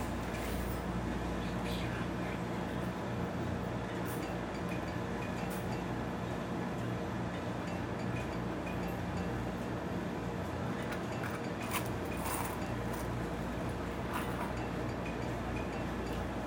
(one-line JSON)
{"title": "Catalpa Ave, Flushing, NY, USA - Tina's Laundromat", "date": "2022-03-06 18:10:00", "description": "Laundromat ambience in Ridgewood, Queens.", "latitude": "40.70", "longitude": "-73.90", "altitude": "28", "timezone": "America/New_York"}